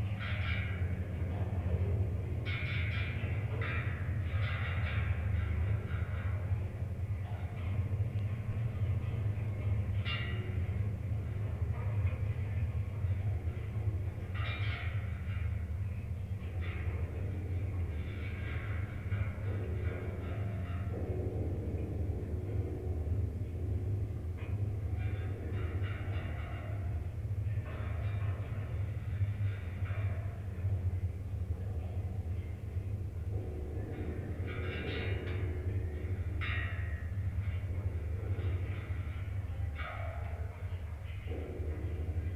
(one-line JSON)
{"title": "Fishermans Bothy Isle of Mull, UK - Wire Fence", "date": "2019-11-14 15:44:00", "description": "A wire fence surrounding a small field next to where I was staying. As the wind blew, small stalks of grass and bracken 'played' the wires of the fence and the mics picked up the\nsound of the wind as an overlay to the whole performance. JRF contact mics into a Sony M10", "latitude": "56.38", "longitude": "-6.06", "altitude": "2", "timezone": "Europe/London"}